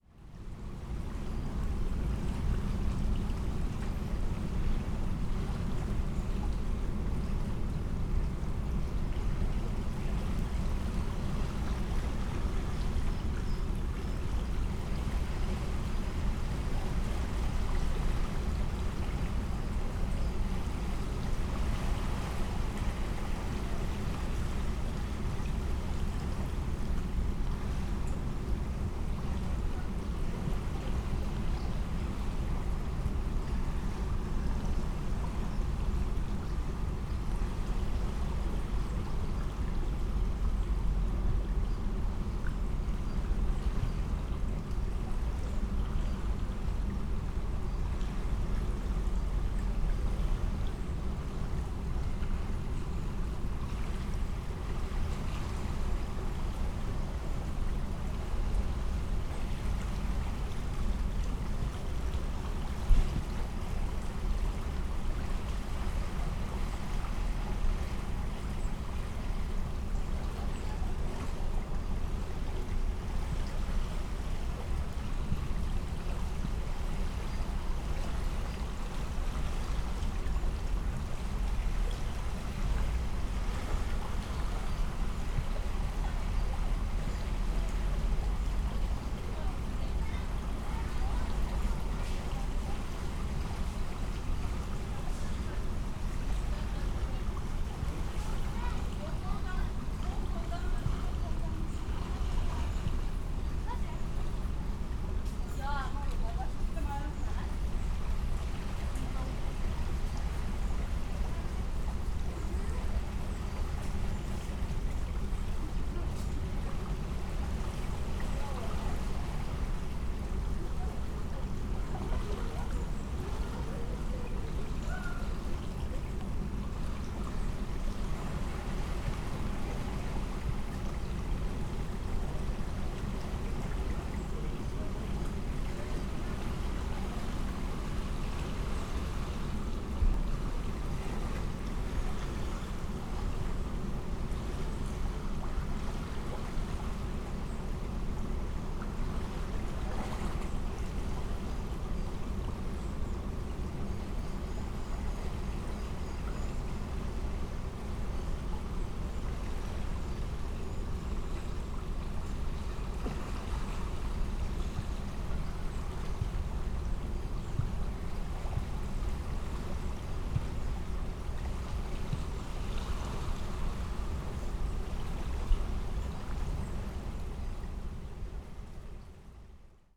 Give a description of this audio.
near / under pedestrian bridge to the maribor public swiming pool, river sounds and hum of the nearby hydro electric power plant. (SD702 + DPA4060)